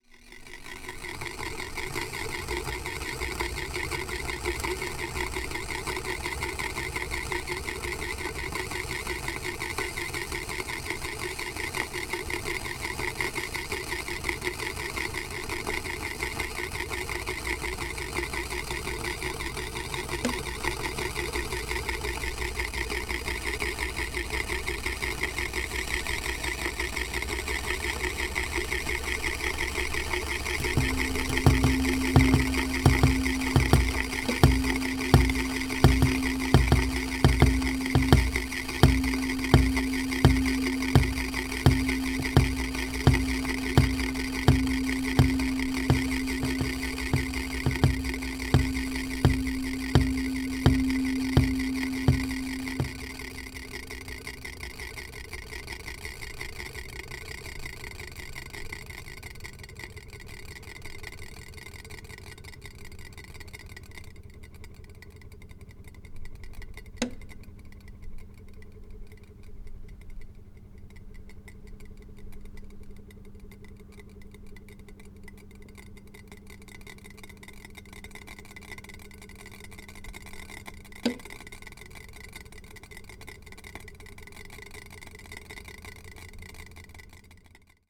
wobbly pot, Riga, Latvia - boiling water with a wobbly pot
boiling water with a wobbly pot